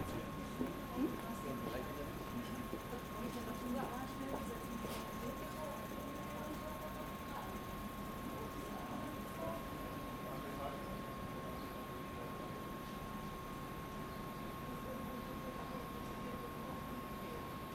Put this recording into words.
S-Bahn fährt ein, Pendler steigen aus